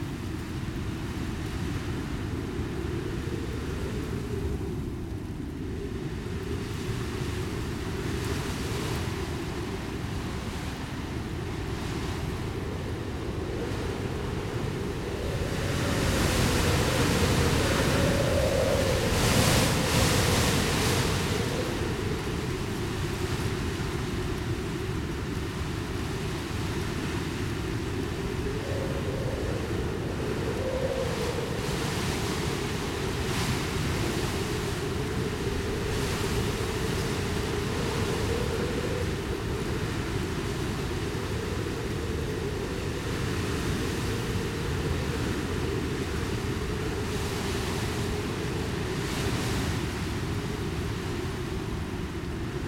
Troon, Camborne, Cornwall, UK - Howling Wind
Very windy day, the sound is the wind passing over electrical wires and through the hedgerows. Recorded with DPA4060 microphones and a Tascam DR100.
31 January 2015, 11:00